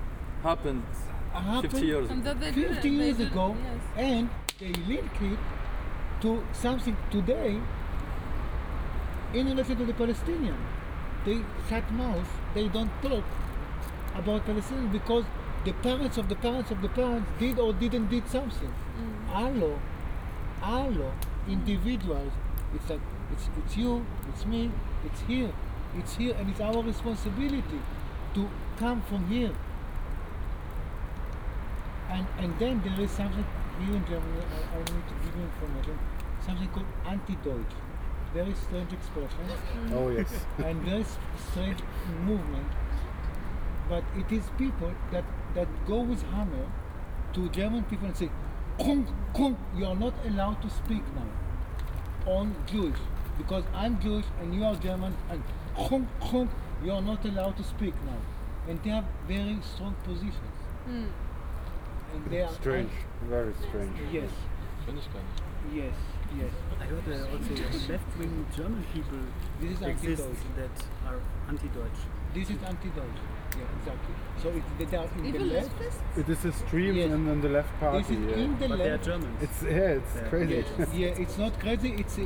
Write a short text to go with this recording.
occupy berlin camp, people talking, campfire, 20-30 people around, improvised kitchen in one of the tents. the night will be cold.